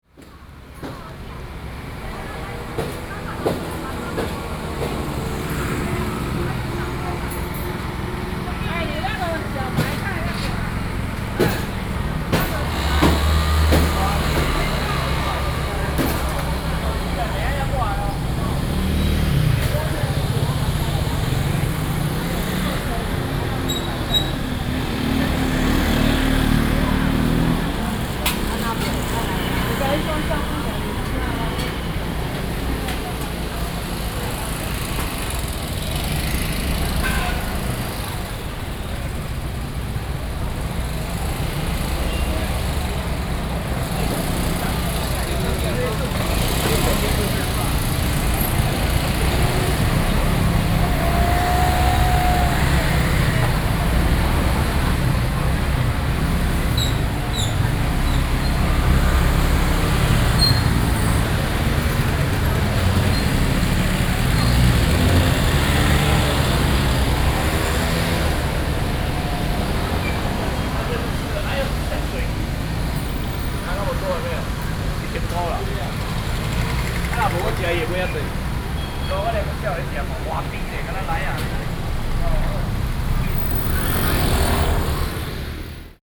Wenchang St., Banqiao Dist. - Walking in the traditional market
Walking in a small alley, Walking in the traditional market
Binaural recordings
Zoom H4n + Soundman OKM II